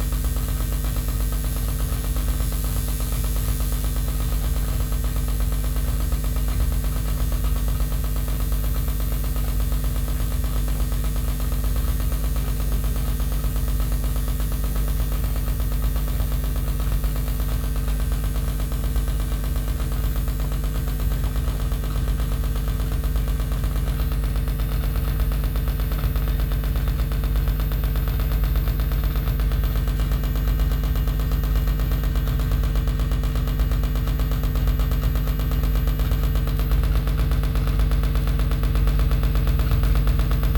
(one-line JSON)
{"title": "cologne, deutz mülheimer str, gebäude 9, visual sound festival, michael vorfeld", "date": "2008-11-23 19:05:00", "description": "soundmap nrw: social ambiences/ listen to the people - in & outdoor nearfield recordings", "latitude": "50.95", "longitude": "6.99", "altitude": "49", "timezone": "Europe/Berlin"}